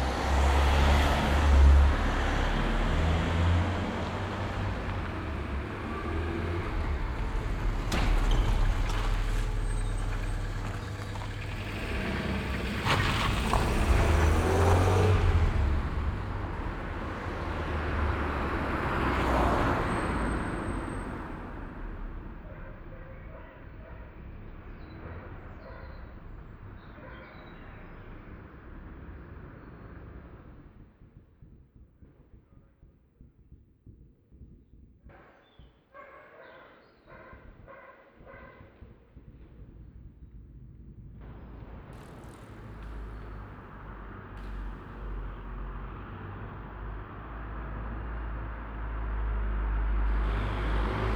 Schönebeck, Essen, Deutschland - essen, heissener str, train bridge
Unter einer Eisenbahnbrücke. Der Klang von vorbeifahrenden Fahrzeugen und Fahrradfahrern auf der Straße und darüber hinweg fahrenden Zügen.
Under a railway bridge. The sound of passing by street traffic and the sound of the trains passing the bridge.
Projekt - Stadtklang//: Hörorte - topographic field recordings and social ambiences